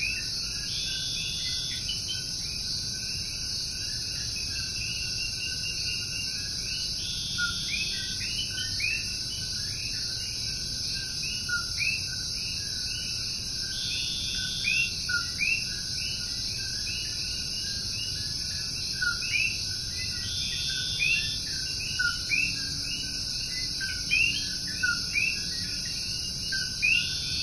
Puerto Diablo, Vieques, Puerto Rico - Vieques Coqui Chirps
Coqui chirps and other ambient noise behind our place on Vieques